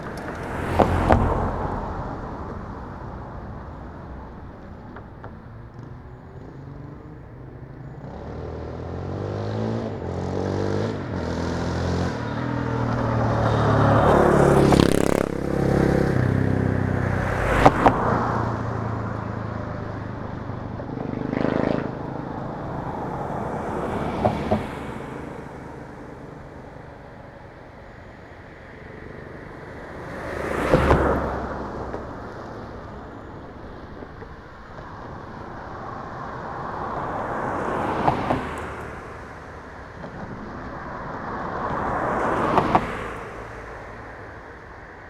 berlin, teltower damm: knesebeckbrücke - borderline: knesebeck bridge
cars passing over a bump
the bridge was closed 1961-1990; reopened to traffic in june 1990 after the fall of the berlin wall
borderline: october 1, 2011